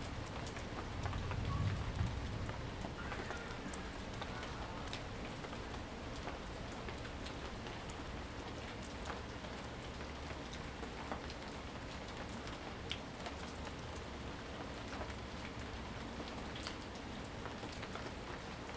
富陽自然生態公園, Taipei City - Rainy Day
In the park, Abandoned military passageway entrance, Rainy Day
Zoom H2n MS+XY